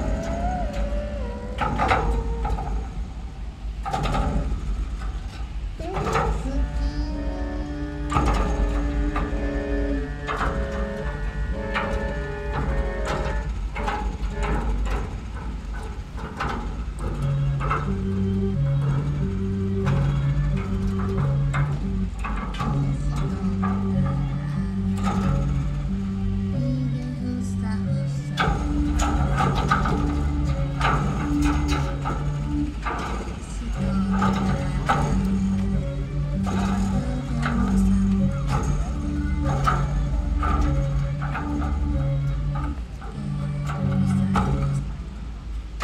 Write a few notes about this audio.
BuckyMedia, performance by Farmers Manual, Berlin, Alexanderplatz, tuned city, 03.07.2008, 16:45, people moving the bucky ball all over the place. wireless contact microphones transmit the vibrations, a dj remixes simultaneously with various soundtracks, Buckymedia is a work conceived by Farmers Manual as 80-sided, 5m diameter bucky balls, in reference to the architect and visionary Richard Buckminster Fuller. By moving these structures, the viewers can navigate through different interpretations of real and virtual spaces and time. Metaphorically speaking the big balls represent the globe, the net, and the circular communication of the web. As physical object they are a space within a space, it is an offer of a different type of architecture, one that is circular, expendable and infinite. Their meaning is created in the moment when a viewer is interacting with them - walking into and through them, standing within them, watching or even touching them.